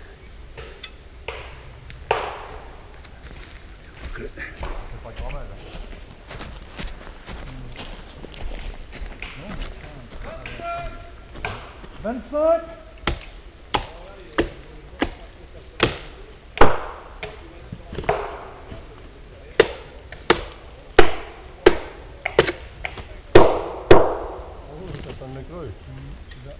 Martelage / Cornimont, France - Martelage, ambiance 1
Dans le cadre de l’appel à projet culturel du Parc naturel régional des Ballons des Vosges “Mon village et l’artiste”